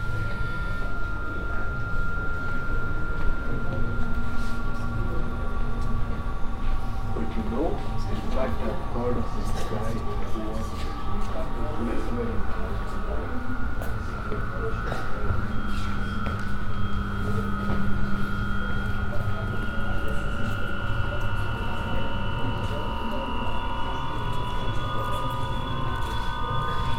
Centre Pompidou, Paris. Paris-Delhi-Bombay...
A soundwalk around the Paris-Delhi-Bombay... exhibition. Part 2
Paris, France